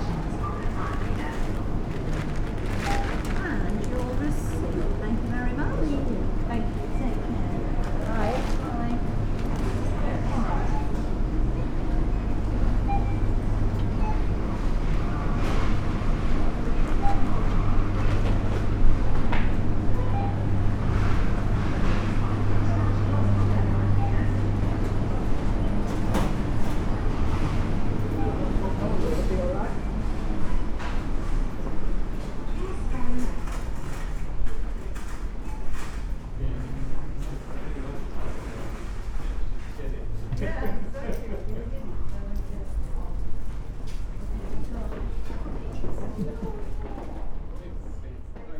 Supermarket, Malvern, UK
Down the freezer aisle and then the bread department, through the tills and briefly outside.
MixPre 6 II with 2 Sennheiser MKH 8020s.
England, United Kingdom, September 2022